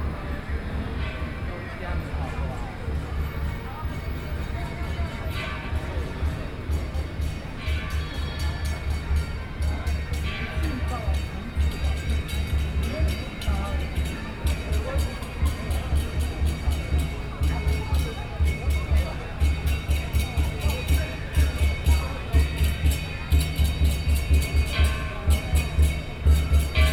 Parade, Pedestrian, Traffic Sound, In the corner of the street
Please turn up the volume a little
Binaural recordings, Sony PCM D100 + Soundman OKM II
Zhongzheng District, Taipei City, Taiwan, February 2014